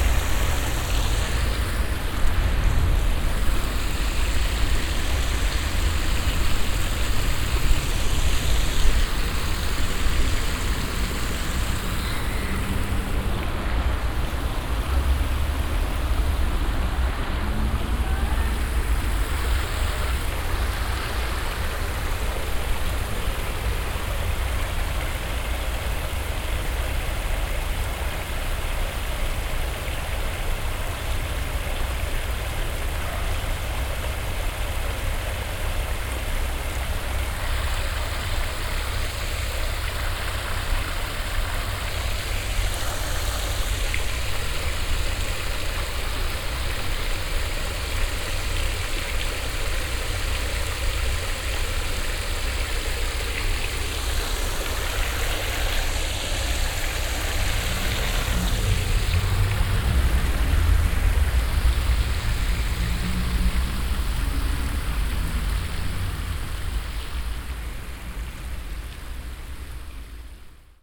hässliche verkommene platten-beton-stahl brunnenanlage als vermeintliche architektonische zierde des überdimensionierten platzes, plätschern im wind
soundmap nrw:
projekt :resonanzen - social ambiences/ listen to the people - in & outdoor nearfield recordings